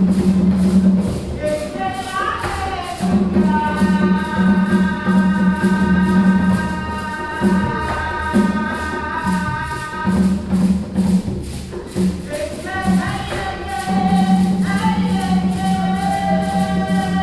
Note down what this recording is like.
… we are at the community hall of a Lutheran church, the “Christuskirche”, in Hamm West… a large very colourful audience is gathered here… many in African attire… inside the hall, the opening of the Afrika Festival is reaching its peak… Yemi Ojo on the drum performs a traditional Yoruba blessing for this day… two women pick up and join in with “native” and Christian African songs, Yvonne Chipo Makopa and Godsglory Jibrill-ellems… it’s the Yes Afrika Festival 2014…